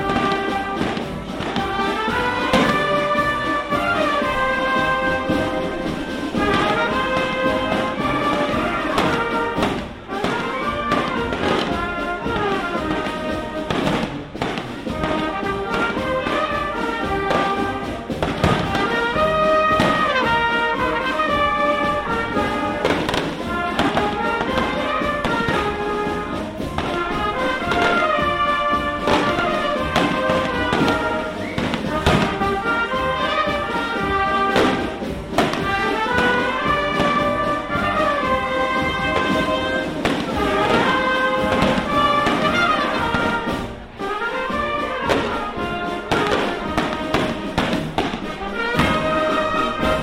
01Ferrel, Portugal. Festa em honra de nossa senhora de Guia. A.Mainenti